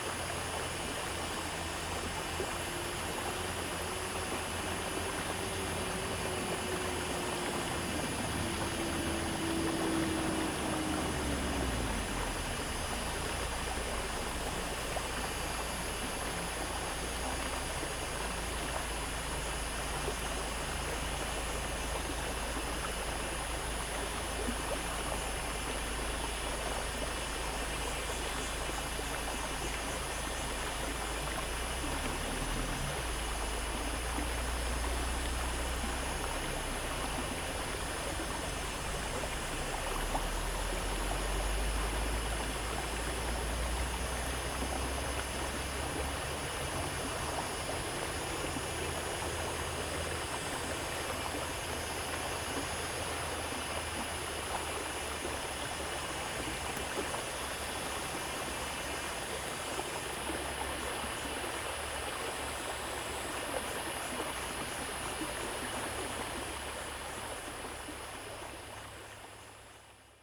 田份, 桃米里Puli Township - Cicadas and Flow sound
Irrigation channels, Cicadas sound, Flow sound
Zoom H2n MS+XY